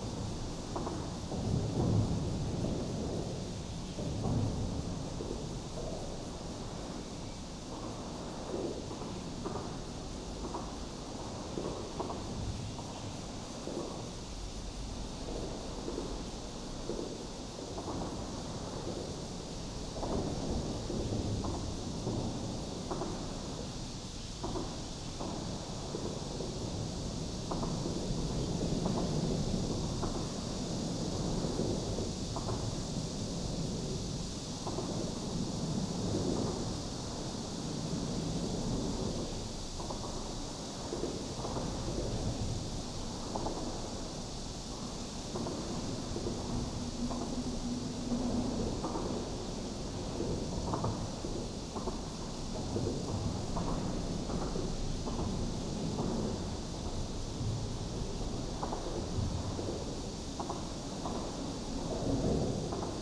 Ln., Sec., Minquan Rd., Zhongli Dist. - Under the highway
Under the highway, traffic sound, Cicada cry
Zoom H6 XY